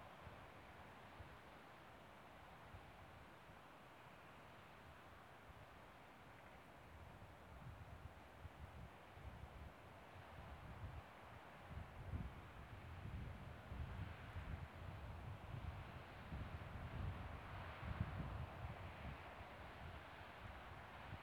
East, Island - Somewhere around Geithellar - plain, waterfall afar
23 July 2013, 12:12